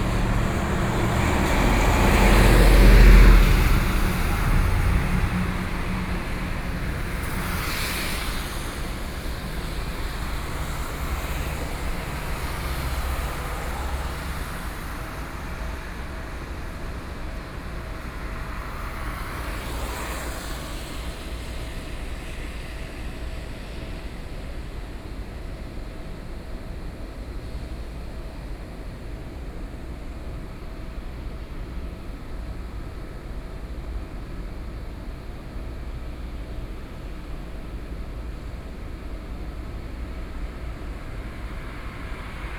Jiangxia Rd., Su’ao Township - Traffic noise
Rainy Day, The sound from the vehicle, Cement plant across the road noise, Zoom H4n+ Soundman OKM II